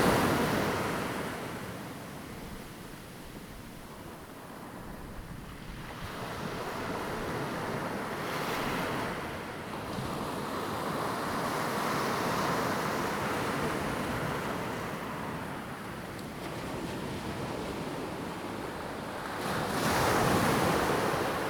In the beach, Sound of the waves
Zoom H2n MS +XY